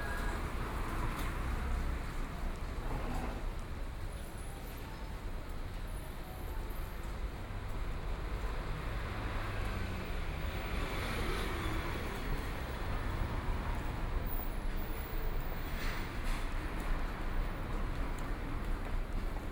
In the corner of the street, Traffic Sound, Walking in the street, Walking towards the west direction
Please turn up the volume a little
Binaural recordings, Sony PCM D100 + Soundman OKM II